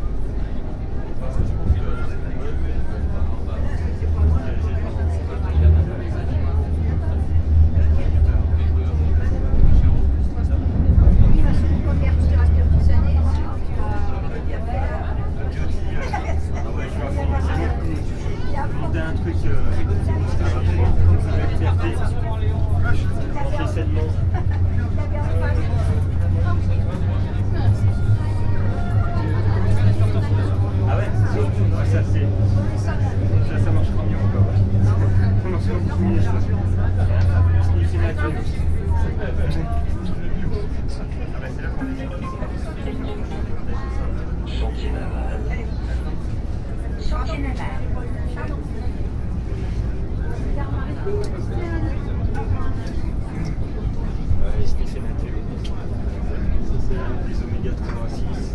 Place du Bouffay, Nantes, France - (602e) Tram ride from Bouffay to Chantiers Navals station
Binaural recording of a tram ride from Bouffay to Chantiers Navals station.
recorded with Soundman OKM + Sony D100
sound posted by Katarzyna Trzeciak